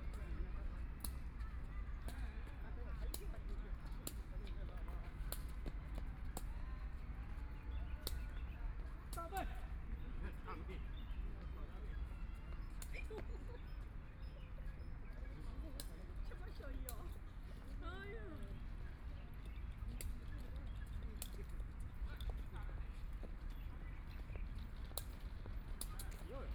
Huangxing Park, Shanghai - Shuttlecock
A group of old people are shuttlecock, Binaural recording, Zoom H6+ Soundman OKM II